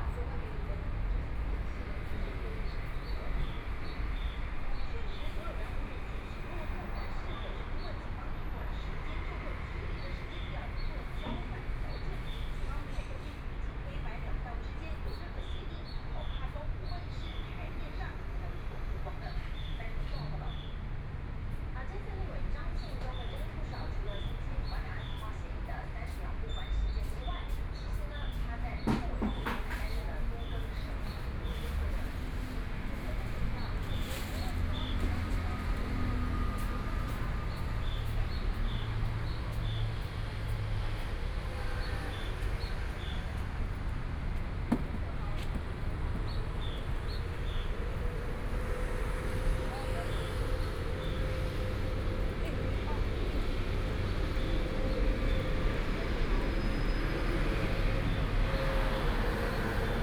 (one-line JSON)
{"title": "Xinsheng N. Rd., Taipei City - walking on the road", "date": "2014-04-03 11:44:00", "description": "walking on the road, Environmental sounds, Traffic Sound, Birds", "latitude": "25.06", "longitude": "121.53", "altitude": "7", "timezone": "Asia/Taipei"}